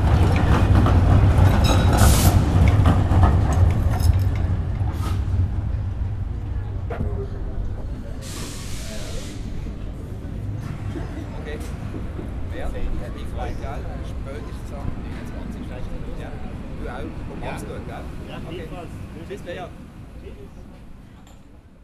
Bahnhofplatz, Kaffee mit Aussicht auf Trams und Menschen
französisch angehauchtes Kaffee mit Aussicht auf Touristen und ein- und ausströmenden Menschen Bahnhof Basel
Basel, Schweiz, 8 July 2011